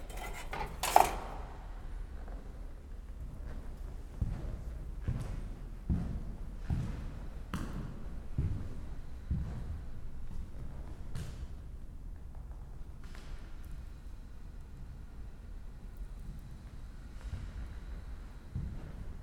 Tallinn, Kultuurikatel - soundwalking
walk in old power plant complex, now used for cultural events. parts of stalker from tarkovsky have been filmed here.